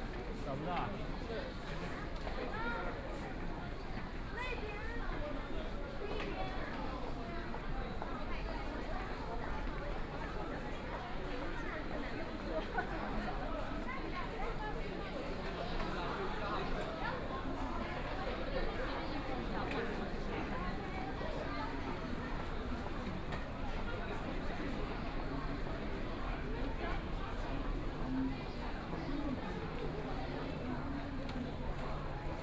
Laoximen Station, Shanghai - walk in the Station
Walking in the subway station, Binaural recording, Zoom H6+ Soundman OKM II